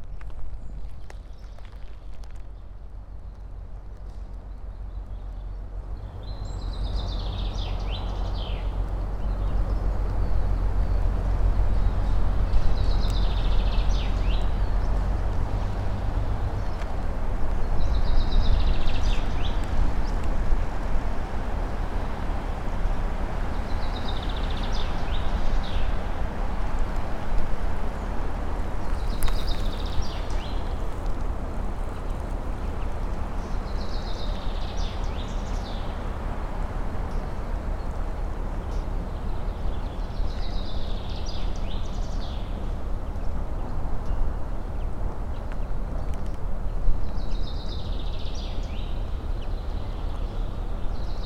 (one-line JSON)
{"title": "Paldiski linn, Harju maakond, Estonia - Military exercises near Paldiski", "date": "2016-04-28 11:00:00", "description": "Military training exercises near Paldiski town.", "latitude": "59.37", "longitude": "24.11", "altitude": "24", "timezone": "Europe/Tallinn"}